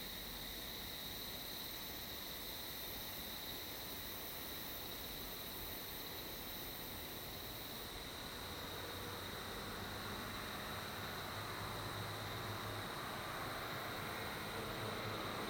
Mountain road, traffic sound, sound of cicadas, wind
Zoom H2N MS+ XY